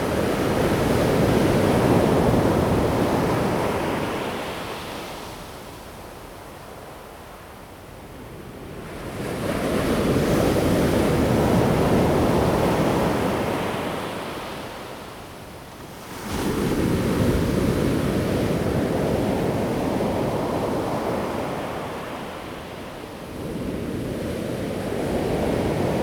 泰和, 太麻里鄉台東縣台灣 - Near the waves
At the beach, Sound of the waves, Near the waves
Zoom H2n MS+XY
Taimali Township, Taitung County, Taiwan, 16 March 2018, 07:00